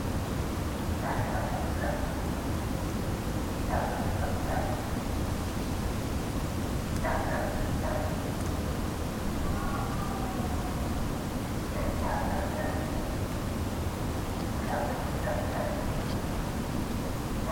Maintenon, France - Night boredom
Far away, a small dog is barking all night because of boredom, in a night ambience.
1 January 2016